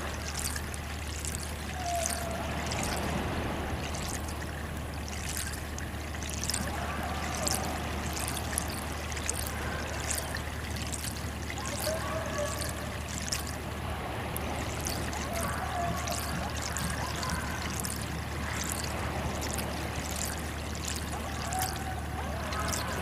Niaqornat, Grønland - Melt water
The trickle of melt water in the pipe, leading to the fresh water supply of the village. Recorded with a Zoom Q3HD with Dead Kitten wind shield.
Greenland, 21 June 2013, 14:00